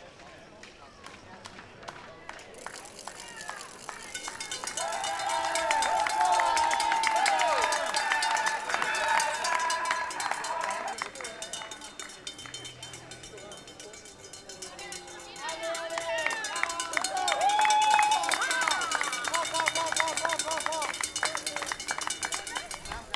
IronMan 2014 Bad Vilbel, Germany - IronMan 2014 - Cheers during bike competition
Germany, Bad Vilbel Sud, Frankfurt Metropolitan area
IronMan 2014 - Bike competition
People cheering with cowbells and rattles
Recorder: Zoom H6
Mic: SGH-6 with windjammer